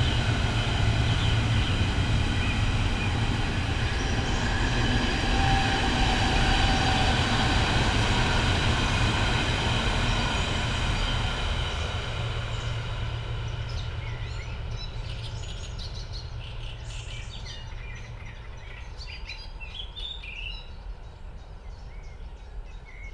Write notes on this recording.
place revisited on a spring evening, elaborated soundscpae mainly because of the birds: Song thrush, Great reed warbler, Savi's warbler (german: Singdrossel, Drosselrohsänger, Rohrschwirl) and others, low impact of the nearby Autobahn, (Sony PCM D50, DPA4060)